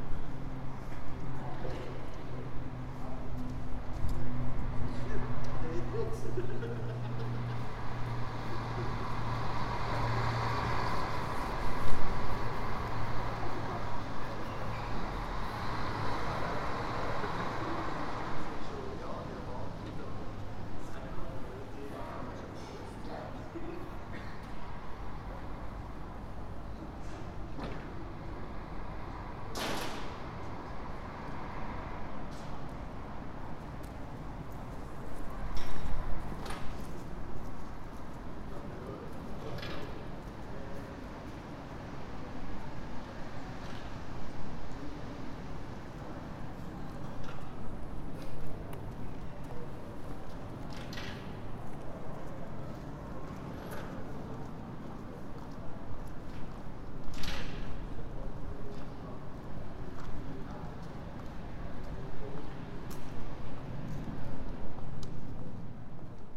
Voices, a plane and other noises in front of a mall at Kasinostrasse.